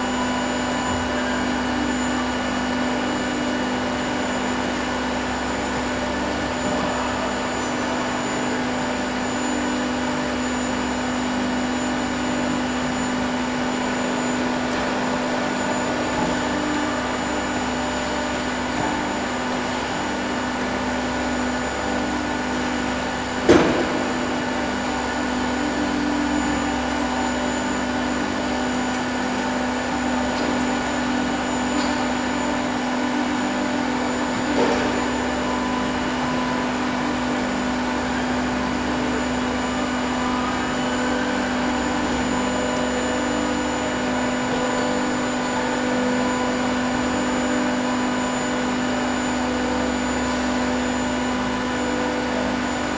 Madeley, Telford and Wrekin, UK - Central ambience near build area
Harmonically beautiful and complex factory ambience. Recorded with Roland R-26 using two of the built in microphones in XY configuration. Industrial sized 3D printers work night and day producing ever-changing products in a vast hangar style space.